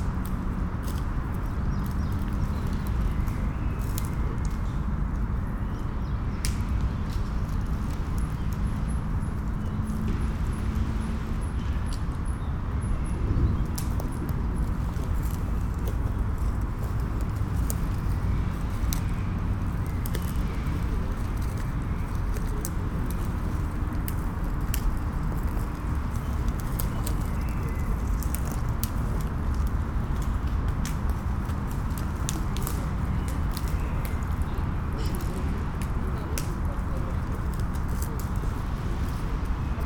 Pirita Beach Tallinn, forest movements
recording from the Sonic Surveys of Tallinn workshop, May 2010